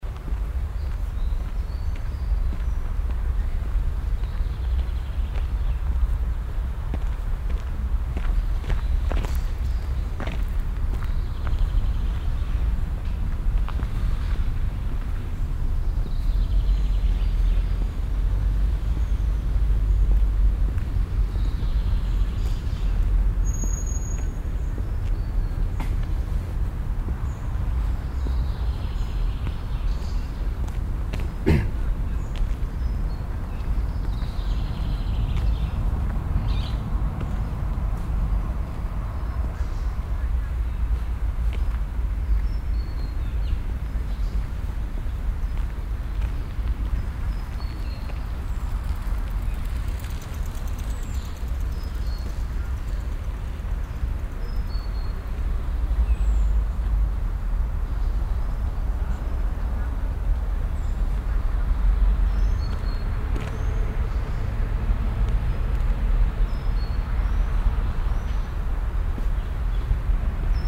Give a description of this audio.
soundmap: köln/ nrw, friedenspark morgens, gehweg sued, project: social ambiences/ listen to the people - in & outdoor nearfield recordings